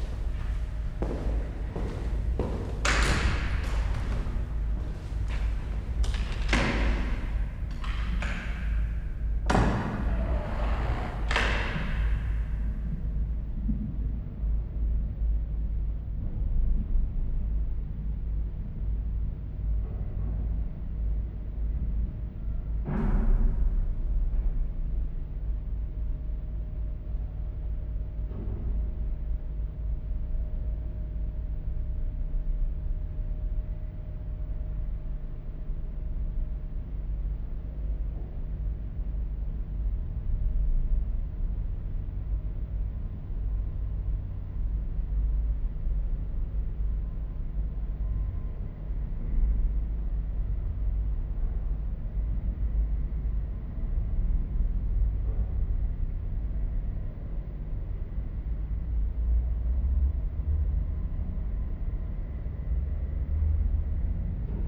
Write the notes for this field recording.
Inside the church. A chair, footsteps, a door - then the ambience of the empty space with some distant accents. This recording is part of the intermedia sound art exhibition project - sonic states, soundmap nrw -topographic field recordings, social ambiences and art places